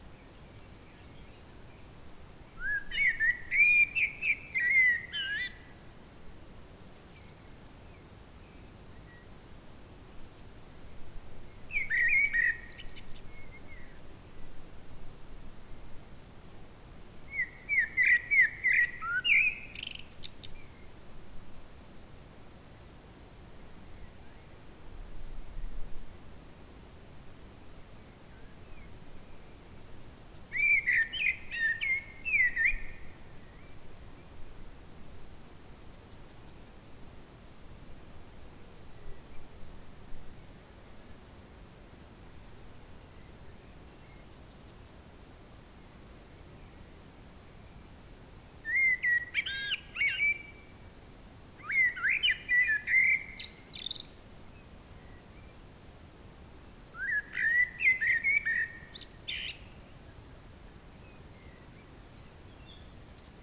La Grande Serve, La Chabanne - peaceful birds singing
a moment of tranquility. hissing trees and singing birds announcing sunset. theres also a dog and a horse nearby.